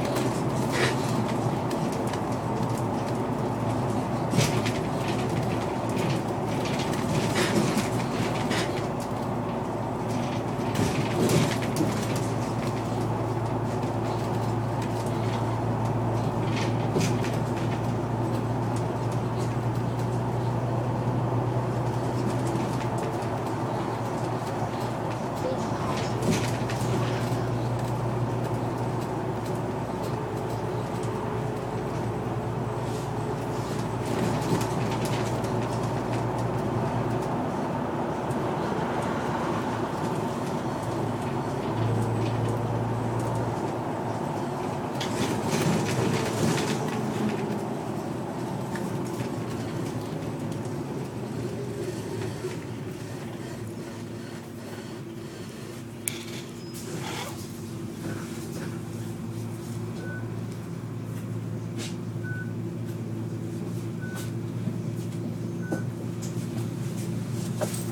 In a articulated bus from Valkenswaard to Eindhoven
Valkenswaard, The Netherlands, February 2012